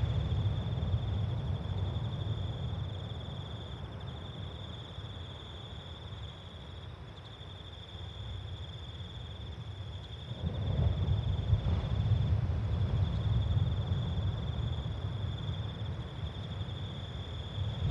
Of all my recordings at Whiritoa, this one reminds me the most of what it sounded like sitting on the deck of my friends beach house drinking a cool beer on a hot summers night..